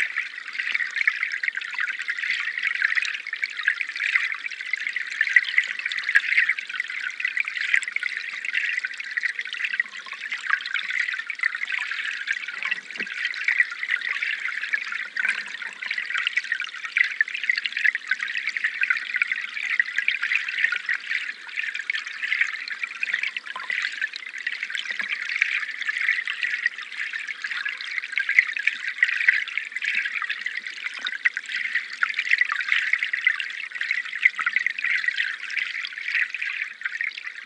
Sanquhar, UK - Waterway Ferrics Recording 004
Recorded with pair of Aquarian Audio H2a hydrophones and a Sound Devices Mixpre-3.
Scotland, United Kingdom